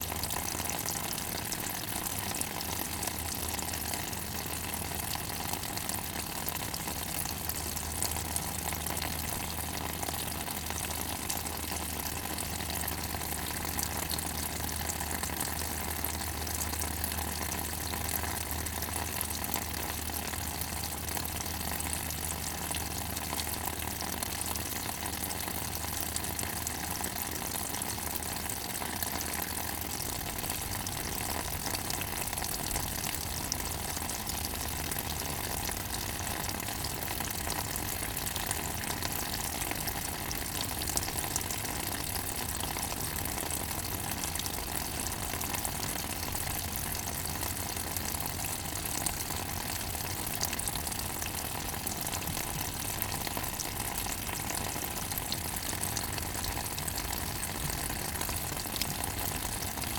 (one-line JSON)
{"title": "Grand Glaize Creek, Valley Park, Missouri, USA - Drainage Pipe", "date": "2020-12-20 14:56:00", "description": "Drainage Pipe Study. Large steel drainage pipe running through the embankment of Grand Glaize Creek. A rivulet of water is emptying out of pipe and falling about 2 feet onto a creek bed of rocks and leaves. 0-30: narrow MS stereo recording. 30-1:00: narrow MS stereo and hydrophone in pipe. 1:00-1:30: hydrophone alone. 1:30-2:00 stereo contact mics attached to pipe on either side of rivulet", "latitude": "38.56", "longitude": "-90.46", "altitude": "120", "timezone": "America/Chicago"}